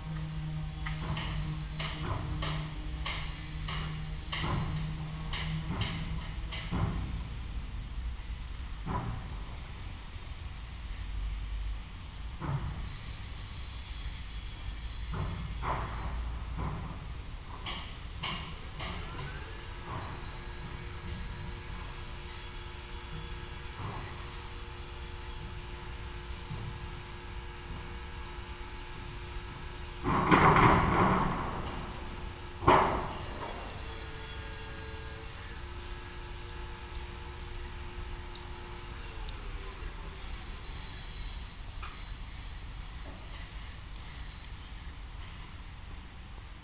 Kaliningrad, Russia, construction works
some building/construction works and distant sound of fountains
Kaliningradskaya oblast, Russia